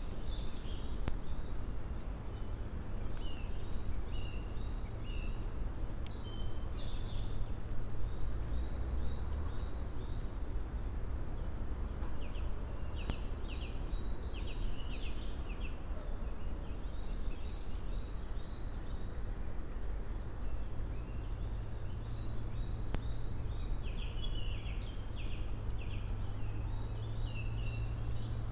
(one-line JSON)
{"title": "dale, Piramida, Slovenia - slow walk", "date": "2013-04-24 17:16:00", "description": "dry leaves, wind, birds, small dry things falling down from tree crowns, distant creaks, train ...", "latitude": "46.58", "longitude": "15.65", "altitude": "379", "timezone": "Europe/Ljubljana"}